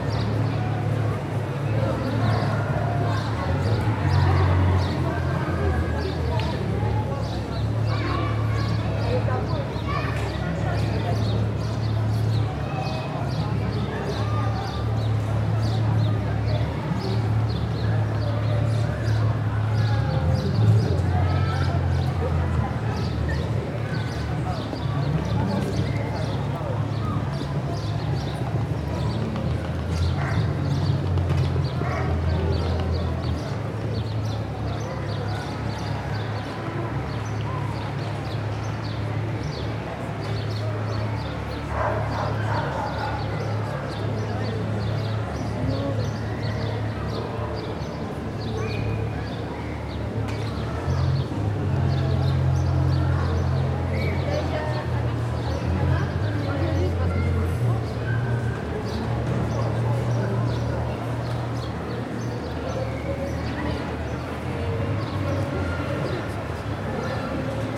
Rue Lafayette, Toulouse, France - Toulouse Street
Ambience street
Captation : ZOOMH4n